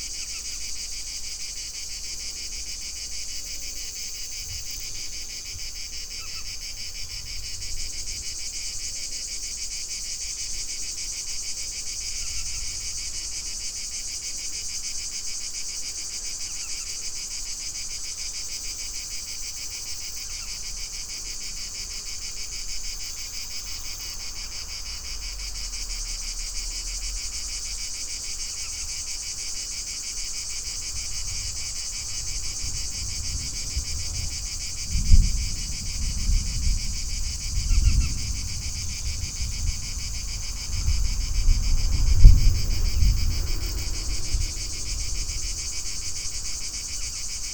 quarry, Marušići, Croatia - void voices - oak grove - high summer
hot afternoon, cicadas, rabbit, high grass, dry leaves, distant thunder
19 July 2013